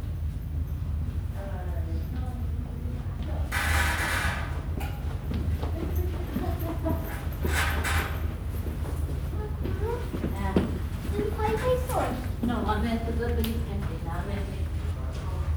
Homerton Hospital, Clapton, London, UK - Waiting for a blood test, Homerton Hospital
Usually when I go to this hospital for a blood test it is full of people waiting. Today was amazingly quiet, only 3 others. Even the nurse remarked on nobody being there. The piercing bleeps were just as loud though and it's remarkable how much low frequency sound is present in hospitals.